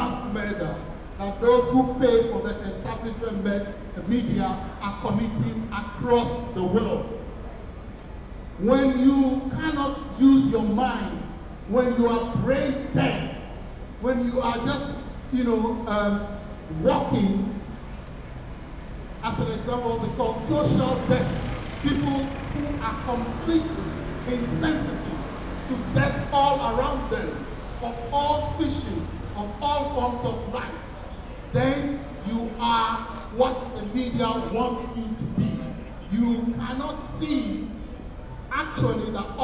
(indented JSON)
{
  "title": "London Derry Street - Extinction Rebellion demonstration",
  "date": "2019-07-19 13:44:00",
  "description": "Extinction Rebellion demonstration: Requiem for a Dead Planet “Newspapers – Tell the Truth”\nIn London – outside Northcliffe House, Derry Street, which is where the Independent, The Daily Mail, The Mail on Sunday, London Live, the Evening Standard and the Metro are all based.",
  "latitude": "51.50",
  "longitude": "-0.19",
  "altitude": "29",
  "timezone": "Europe/London"
}